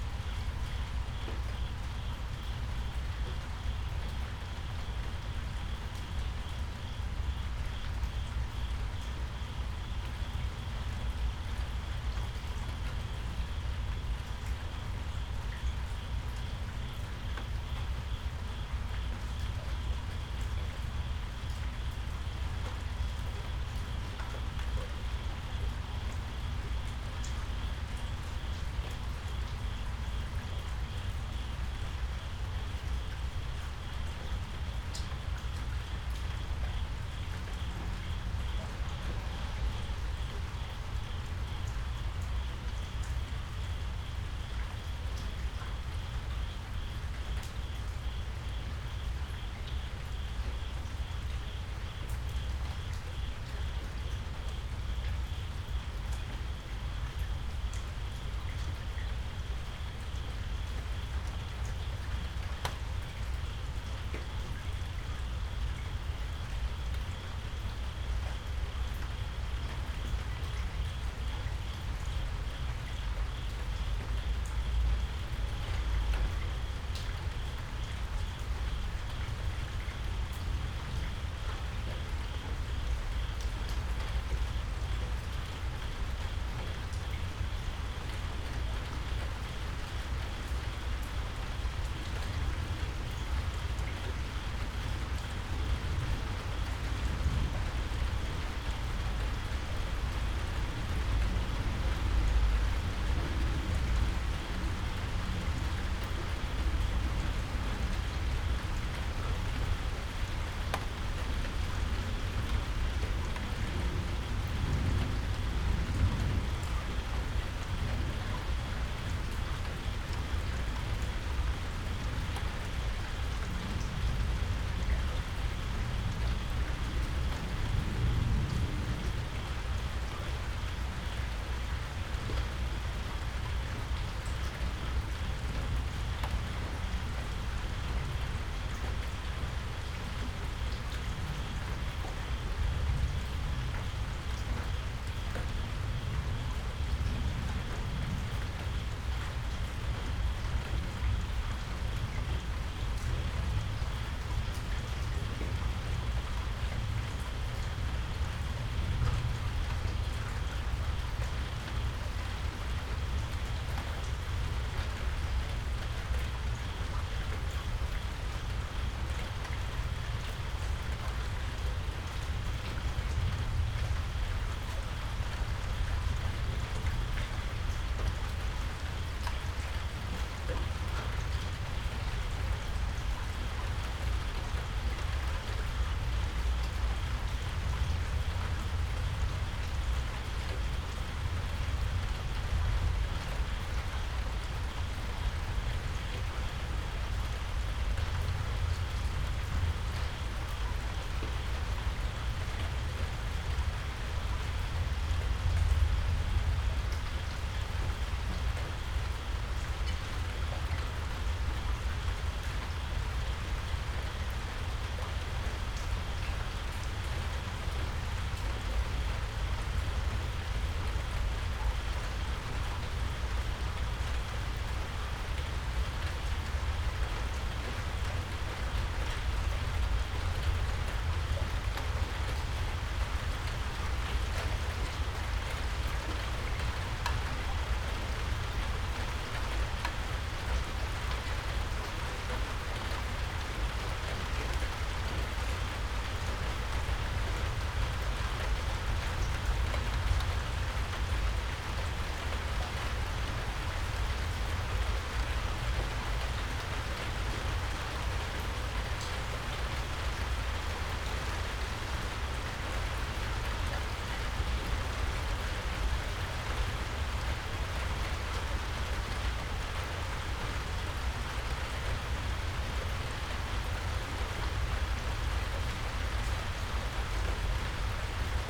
room window, Gojo Guest House Annex, Kyoto - nocturnal, rain
wooden house, tight arrangement of roofs, a bit steeply area